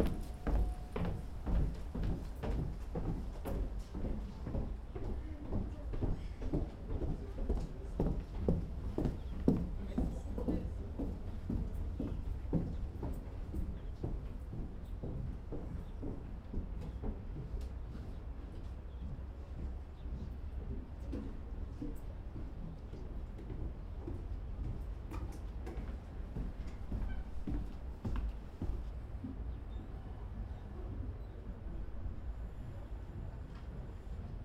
Kapellbrücke, Luzern, Schweiz - Kapellbrücke
Schritte, Holz, Stimmen
August 1998
Kapellbrücke, Luzern, Switzerland